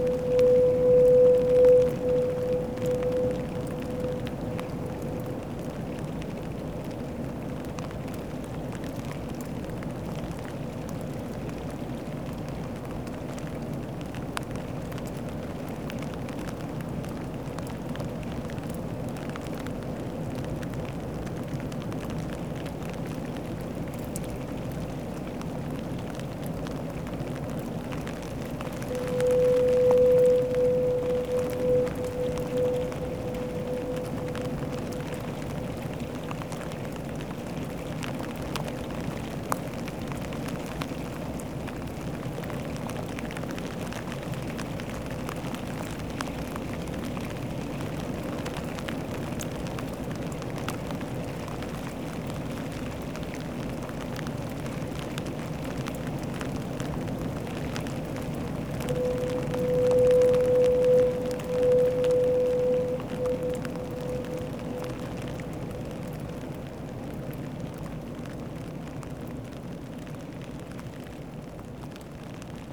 Lizard Point, Cornwall - Foghorn with rain
Trying to sleep in practically the same building where the foghorns are situated is rather stressful; I much preferred listening to the foghorns lonely tones from this distance, even if I did get a little wet.
Helston, UK